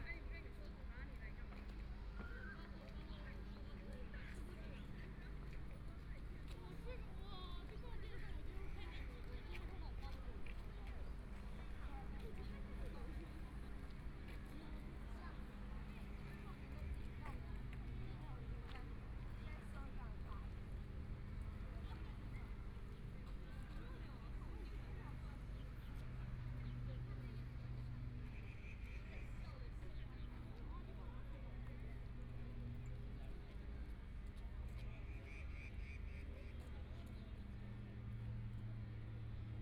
花崗山綜合田徑場, Hualien City - Running voice
Students of running activities, Mower noise, Birds sound
Binaural recordings
Zoom H4n+ Soundman OKM II